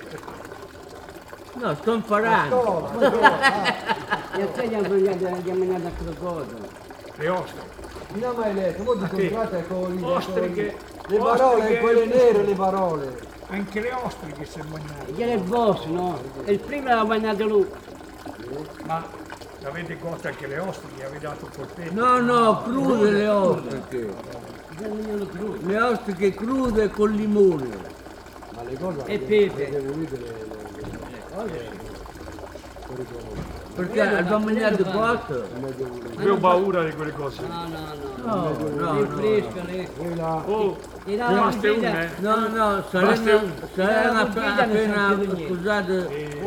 Ogni giorno gli uomini e le donne della borgata si ritrovano alla Fontana del Mascherone. Più o meno a qualsiasi ora c'è qualcuno. Parlano, discutono, ridono, commentano i fatti della borgata e quello che succede nel mondo e nella loro vita.